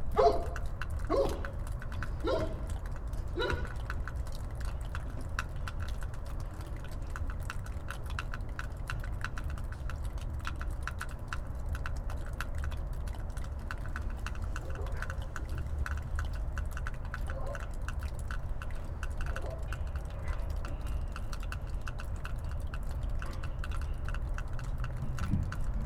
3136 Rosa Parks
Two condenser mics placed next to a window inside an abandoned house. Sounds of char crumbling as doors are opened and closed. Investigations with a contact mic and bullhorn.
Detroit, MI, USA